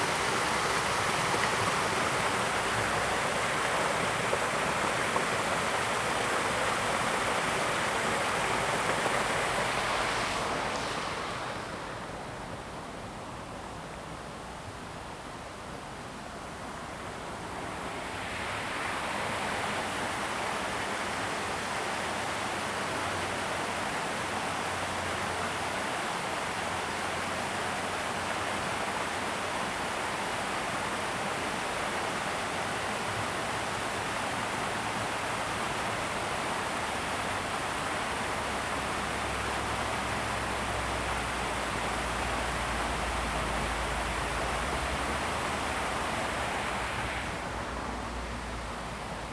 吳江村, Fuli Township - Streams
Streams after heavy rain, Traffic Sound, Birdsong
Zoom H2n MS +XY
Hualien County, Taiwan, 7 September 2014